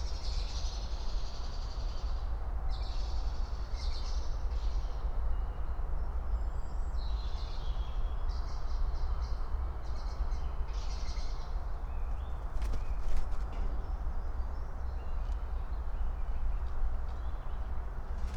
Berlin, Buch, Am Sandhaus - forest edge, former Stasi hospital, birds, Autobahn drone
morning birds /w traffic drone
(remote microphone: AOM5024/ IQAudio/ RasPi Zero/ LTE modem)